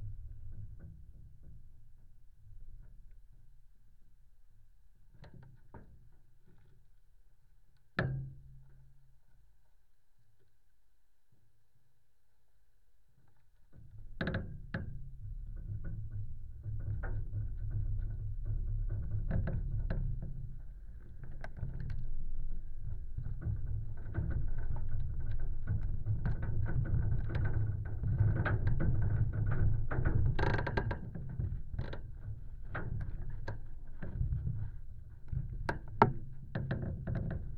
Pakruojis, Lithuania, flag stick
Pakruojis manor. a flag stick in the wind. recorded with contact microphones